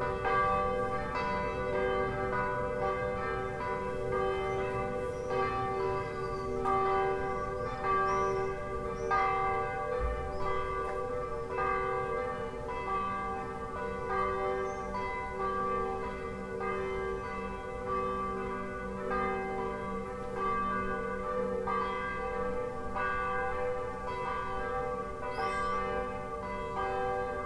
Juan-les-Pins, Antibes, France - Bells and baggage
Just as we arrived in our place at Antibes, all the bells in the locale started to ring. It was just after four, a Saturday afternoon. I was unpacking my stuff, and Mark's boys were doing the same downstairs. I set up the EDIROL R-09 beside an open window, so as to capture a little snippet of the lovely bells. You can just about hear us talking in the background, my suitcase zip, and the swifts (or perhaps swallows, I'm not sure?) circling in the air after the bells have stopped ringing and the sound has completely died away.